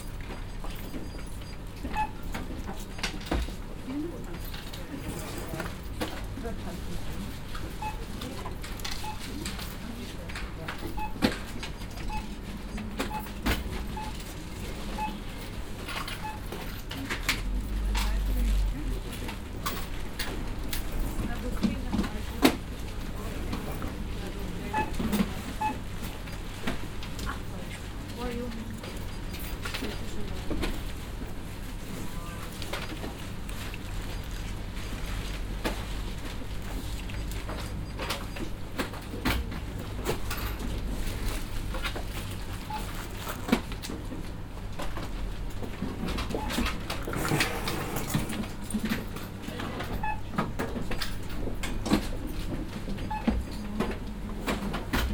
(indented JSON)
{
  "title": "frankfurt a. main, bergerstr, drugstore",
  "date": "2010-06-18 19:14:00",
  "description": "inside a busy drugstore in the morning time\nsoundmap d - social ambiences and topographic field recordings",
  "latitude": "50.13",
  "longitude": "8.71",
  "altitude": "128",
  "timezone": "Europe/Berlin"
}